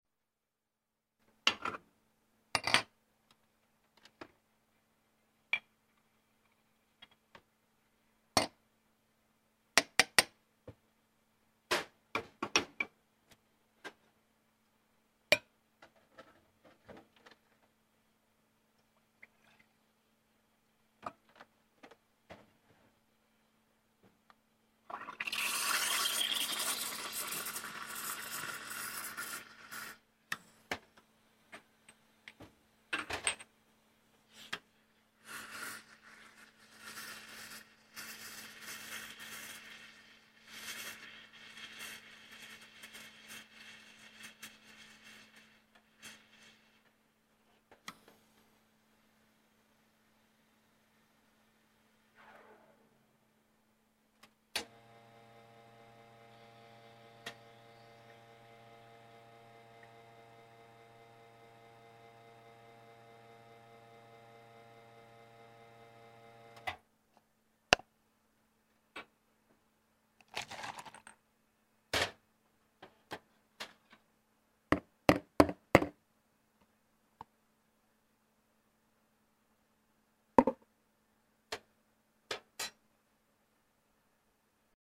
Splat! Cakes N Such - The Pulling of Espresso Shots
This is an audio recording of tamping fresh espresso grounds, pulling the shots, and then pouring them into a cup. This audio was captured in a small building in the morning.
Georgia, United States of America, 21 February 2020, ~10:00